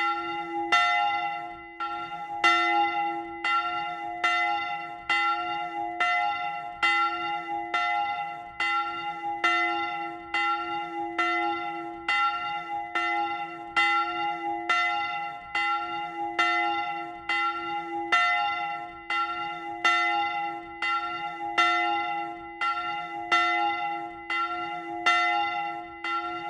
La Sentinelle - Département du Nord
Église Ste Barbe
Volée
France métropolitaine, France, 25 March 2021, 14:15